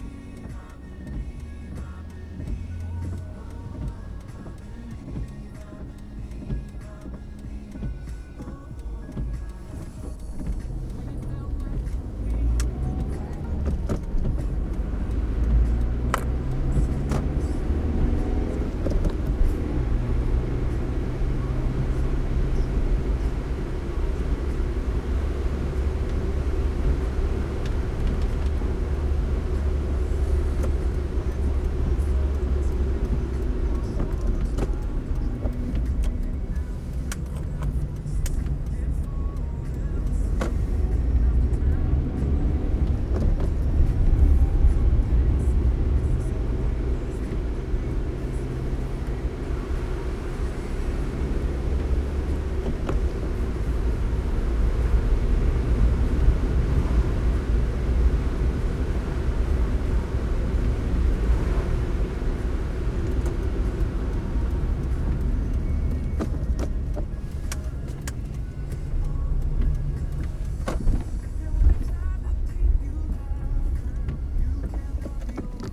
wermelskirchen: zur mühle - the city, the country & me: car drive in the rain
heavy rain showers, car drive in the rain
the city, the country & me: june 18, 2011
Germany